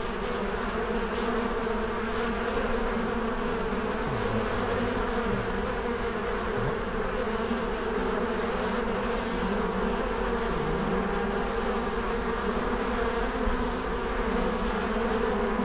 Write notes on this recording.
late summer afternoon, busy bee hive at graveyard Friedhof Columbiadamm, Berlin, Some bees are investigating the wind screen closely. (Sony PCM D50, Primo EM172)